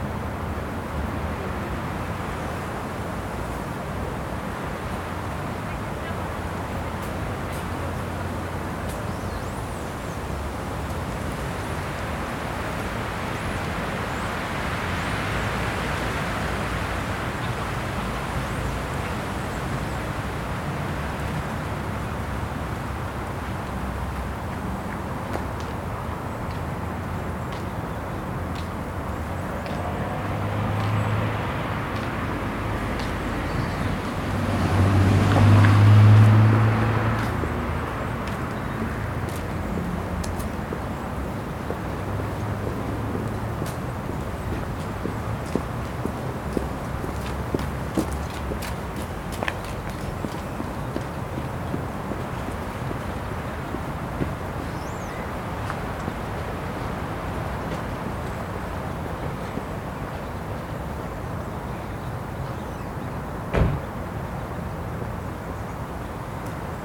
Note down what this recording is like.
bird song, traffic noise, car, walker, city noise, cyclist, Captation : Zoomh4n